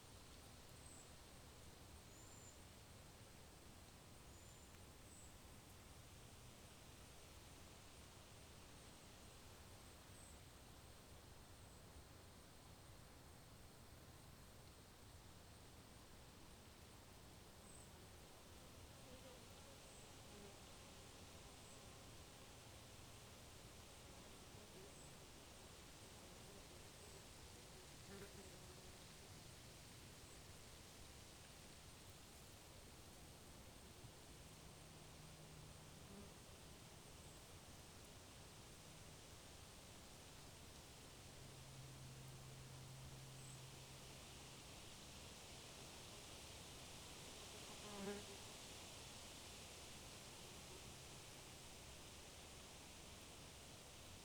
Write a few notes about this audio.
The sounds of a sunny afternoon in the Chengwatana State Forest